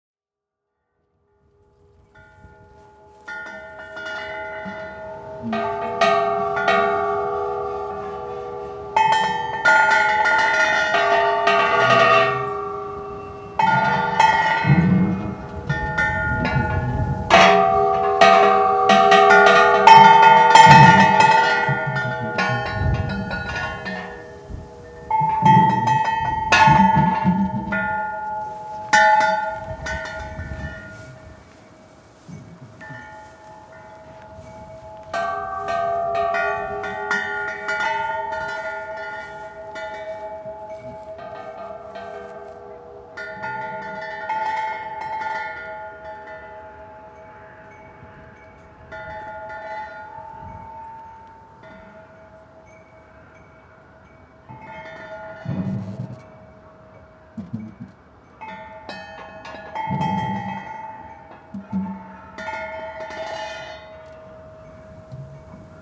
Giant Windchime Array on Danube Island
6 giant windchimes, driven by 10 meter high finned poles. Located on the new island Donauinsel formed by dredging the New Danube channel, for flood control.
Vienna, Austria, 8 December 2011